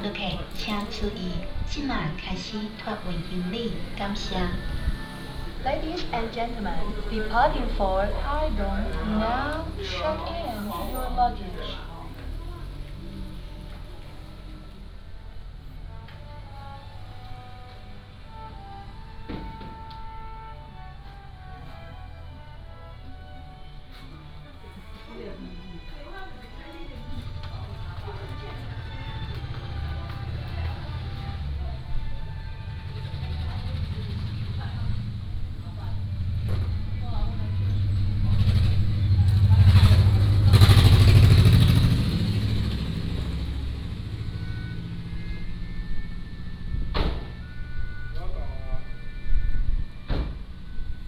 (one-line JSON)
{"title": "Lanyu Airport, Taiwan - At the airport", "date": "2014-10-28 13:41:00", "description": "At the airport", "latitude": "22.03", "longitude": "121.54", "altitude": "13", "timezone": "Asia/Taipei"}